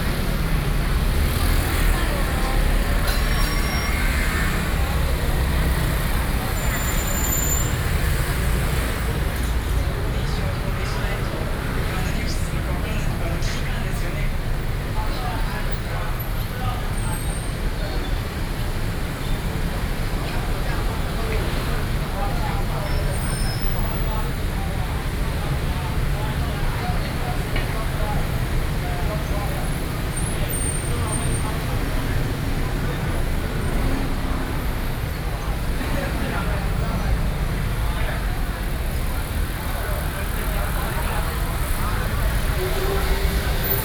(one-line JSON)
{"title": "Gongguan, Zhongzheng District, Taipei City - Night market", "date": "2012-06-30 17:24:00", "description": "SoundWalk, walking in the Night market, Binaural recordings", "latitude": "25.01", "longitude": "121.53", "altitude": "18", "timezone": "Asia/Taipei"}